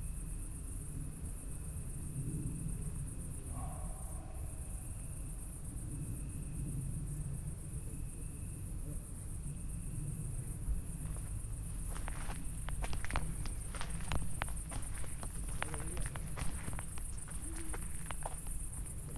sonopoetic path, Maribor, Slovenia - walking, earings touching microphone wires
summer night in the park
July 2015